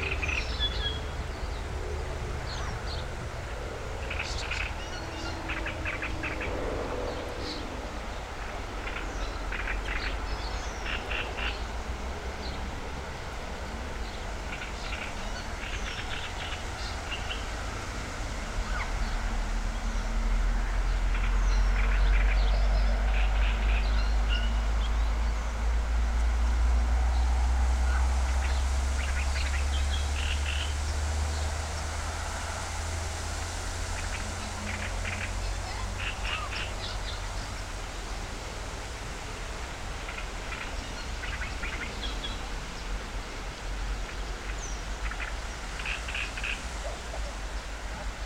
Vilkaviskis, Lithuania, lake
soundscape at the lake